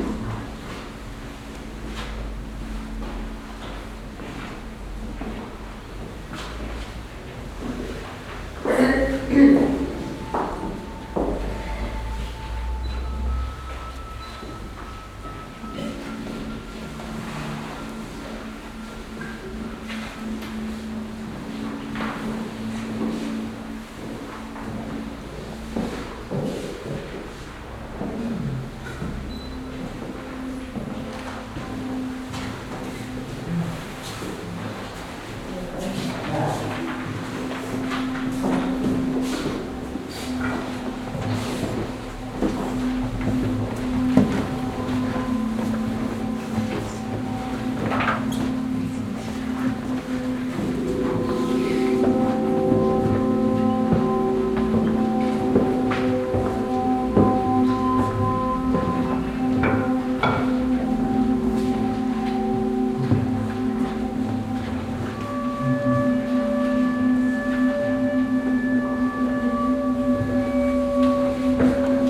Mitte, Kassel, Deutschland - Kassel, old station, north wing, d13, media installation
At the documenta 13 exhibition in one of the buildings at the norther wings of the old station. The sound of a media installation by Haris Epamininoda and Daniel Gustav Cramer. Also to be heard the steps of visitors and silent talking.
soundmap d - social ambiences, art places and topographic field recordings